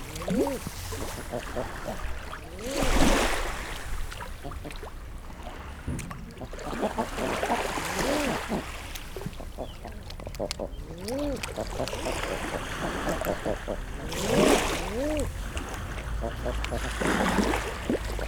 Seahouses, UK - feeding eider ducks ... with bread ...
Seahouses harbour ... feeding eider ducks with bread ... bit surreal that ... male and female calls ... pattering of their webbed feet ... calls from herring gulls ... black-headed gulls ... house sparrow ... much background noise ... lavalier mics clipped to baseball cap ...
15 November, 13:40